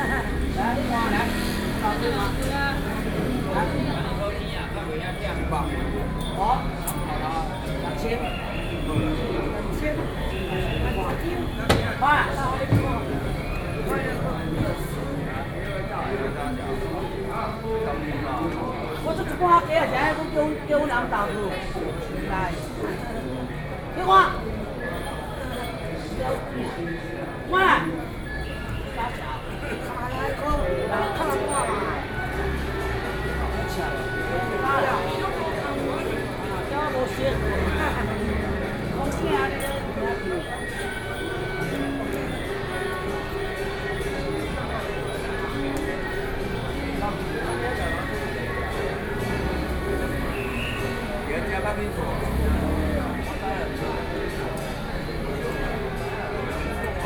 Men and women are quarrel, Traditional temple
Wanhua District, 貴陽街二段199號